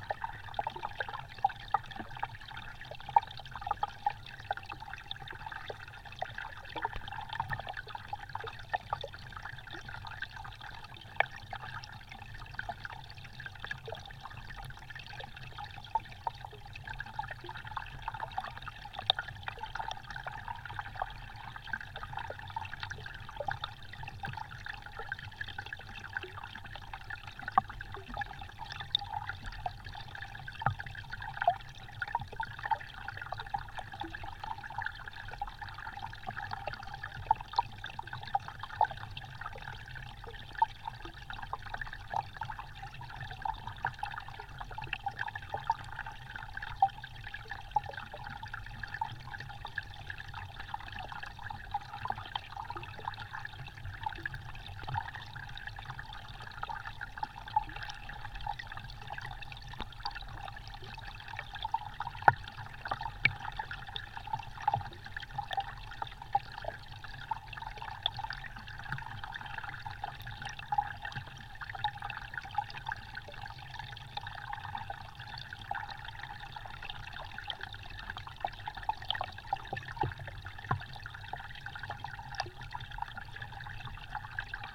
Grybeliai, Lithuania, small river study
My favourite place: a valley with small river. Three parts recording. First part is atmosphere of the place, in the second part mics are right on a tiny ice of river and third part - contact mics on iced branch
Utenos apskritis, Lietuva